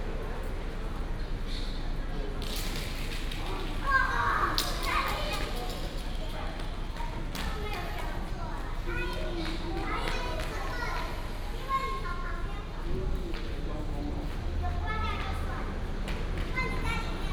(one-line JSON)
{"title": "New Taipei City Art Center, Banqiao Dist. - In the hall outside the library", "date": "2015-07-29 16:37:00", "description": "In the hall outside the library, Children were playing, Traffic Sound, birds sound", "latitude": "25.03", "longitude": "121.47", "altitude": "14", "timezone": "Asia/Taipei"}